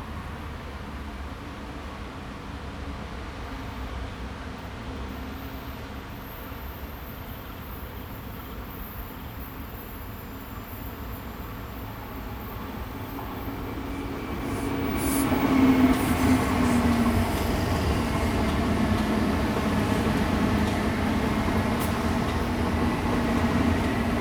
Tamsui Line, New Taipei City - next MRT track
Insect sounds, Traffic Sound, MRT trains through, Bicycle sound
Zoom H2n MS+XY +Spatial Audio